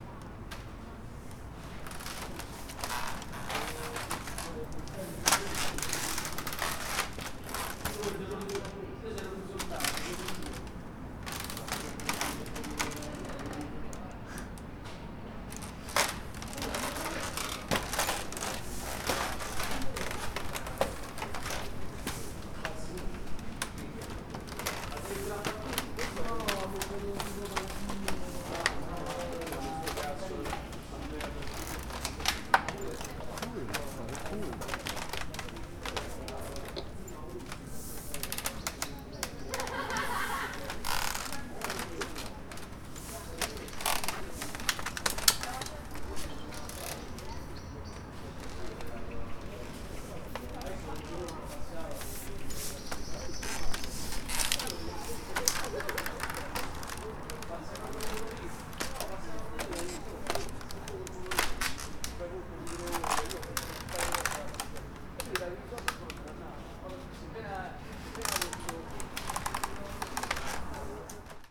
Roma, IT, Testaccio - Climbing the Big Bambú structure
Climbing the Big Bambú structure by Doug + Mike Starn @ MACRO Testaccio - TASCAM DR-2d, internal mics
Roma, Italy, 20 November